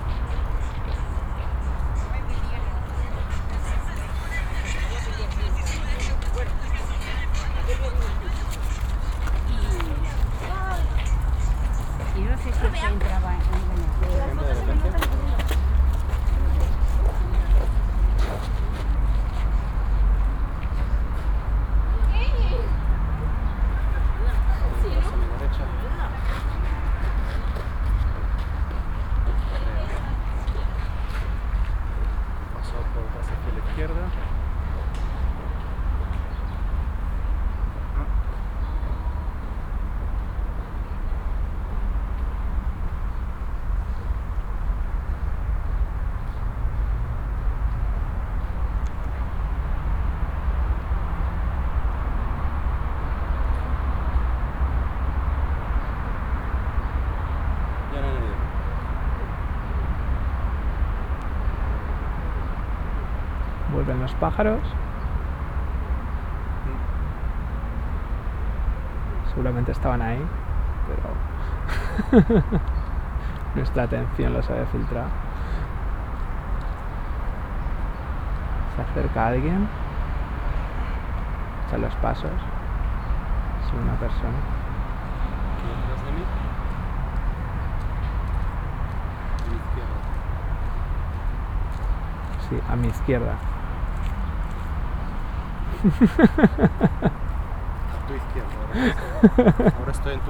Binaural Walk, 2010-07-18, Botanical Gardens, Madrid
2010-07-18, Botanical Gardens, Madrid
This soundwalk was organized in the following way: one of the participants is
picking up environmental sounds through a pair of OKM Soundman in-ear binaural
microphones, while the other participant is wearing a pair of headphones
monitoring the sound environment picked up by the former. In a sense, one
participant can direct, modify, and affect the acoustic orientation and
perception of the other one. Halfway through the exercise, they swap roles.
The place - Madrids Jardín Botánico - was chosen because it is fairly to the
general traffic noise of the city, while still offering the occasional quiet
spot.
The soundwalk was designed as an exercise in listening, specifically for the
1st World Listening Day, 2010-07-18.
WLD World Listening Day